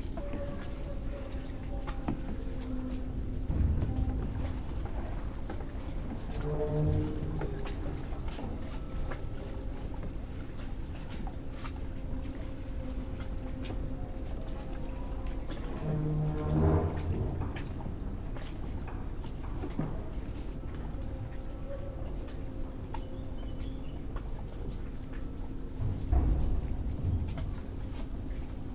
Plänterwald, Berlin - cement factory, river Spree ambience
Sunday afternoon in Spring, under a group of trees at he banks of river Spree, gentle waves, sounds of the cement factory opposite, pedestrians, people on boats
(Sony PCM D50, DPA 4060)
Berlin, Deutschland